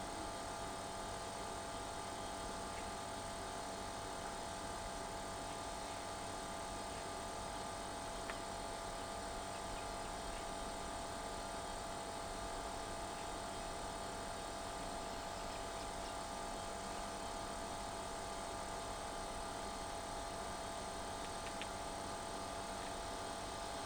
대한민국 서울특별시 서초구 방배4동 87-77 - A/C outdoor unit, Cicada
A/C outdoor unit, Cicada
에어컨 실외기, 매미